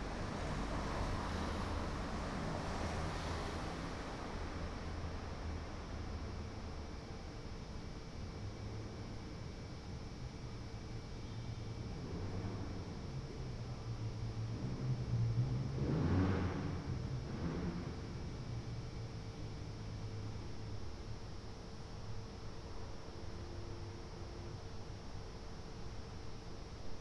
"Round midnight first day of students college closing in the time of COVID19" Soundscape
Chapter CXXII of Ascolto il tuo cuore, città. I listen to your heart, city
Sunday, August 2nd 2020, four months and twenty-two days after the first soundwalk (March 10th) during the night of closure by the law of all the public places due to the epidemic of COVID19.
Start at 00:55 a.m. end at 01:29 a.m. duration of recording 33’47”
The students college (Collegio Universitario Renato Einaudi) closed the day before for summer vacation.
About 30 minutes of this recording are recorded on video too (file name )
Go to previous similar situation, Chapter CXXI, last day of college opening
Piemonte, Italia